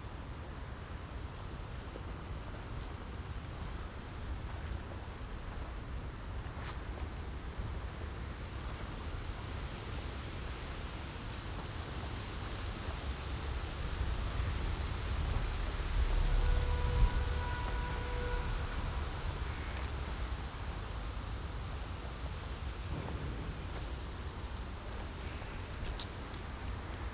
sanctuary for lizards, Alt-Treptow, Berlin - walk in wasteland
the prolongation of the old train embarkment is now fenced and declard as a sanctuary for lizards. walk through the area, summer evening, no lizards around.
(Sony PCM D50, DPA4060)